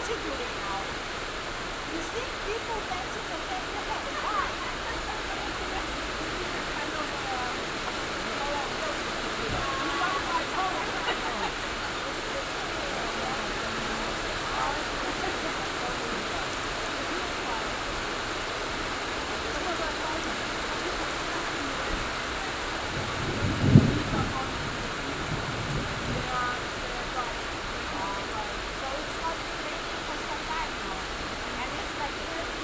Utena, Lithuania, heavy rain
Hiding in a bus station from heavy rain...And, strangely, there's conversation in english...